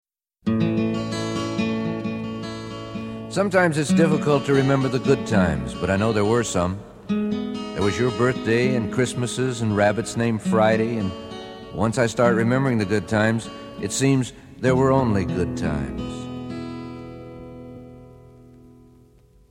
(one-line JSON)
{"title": "Lee Hazlewood R.I.P.", "latitude": "36.03", "longitude": "-115.06", "altitude": "607", "timezone": "GMT+1"}